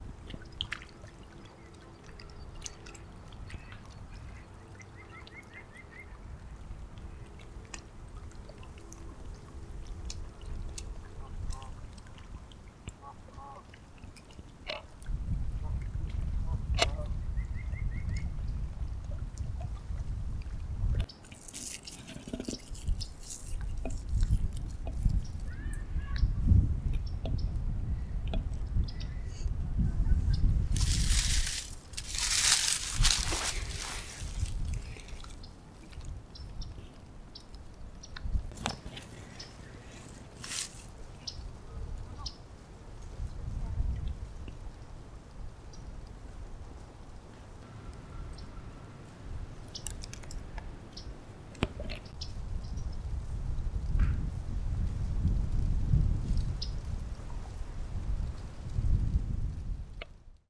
Trail, Ouabache State Park, Bluffton, IN, USA - Birds on Trail 3, Ouabache State Park (sound recording by Izzy Irelan and Grant Reasoner)
Audio recorded by Izzy Irelan and Grant Reasoner. Birds on Trail 3 in Ouabache State Park, Bluffton, IN. Recorded at an Arts in the Parks Soundscape workshop at Ouabache State Park, Bluffton, IN. Sponsored by the Indiana Arts Commission and the Indiana Department of Natural Resources.